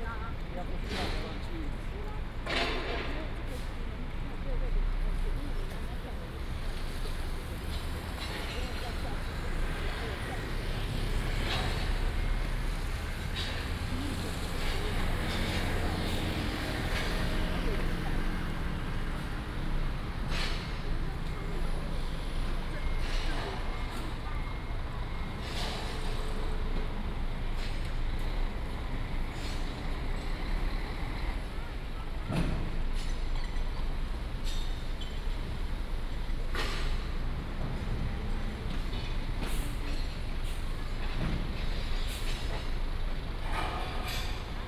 Ln., Wufeng Rd., Banqiao Dist., New Taipei City - Sound from construction site
Sound from construction site, Traffic Sound